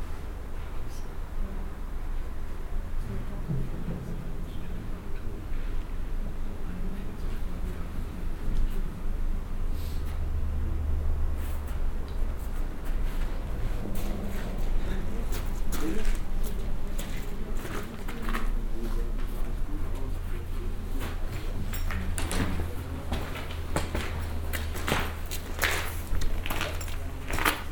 lech, arlberg, at church entrance
At the main entrance of the church, some people leaving and entering the church. The sound of the queeky wooden door and the ski shoes and ski sticks that the people wear.
international soundscapes - topographic field recordings and social ambiences